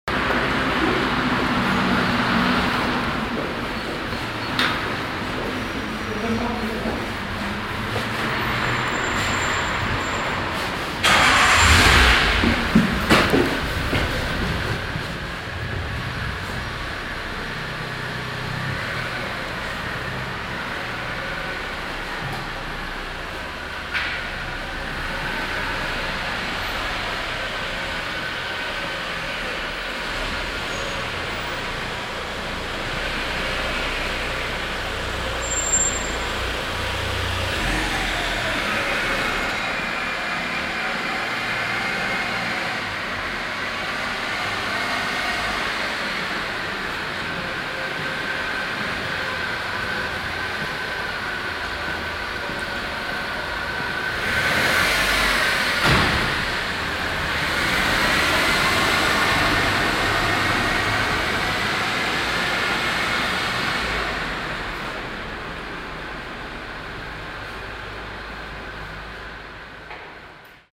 fahrzeuge und schritte in der tiefgarage, mittags
soundmap nrw:
social ambiences, topographic fieldrecordings